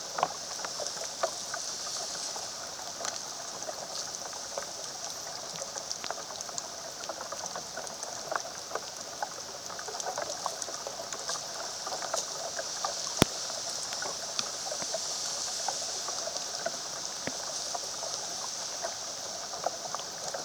Magdalenski park, Maribor, Slovenia - branches in the rain
rain falling on the branches of a large oak tree, recorded with contact microphones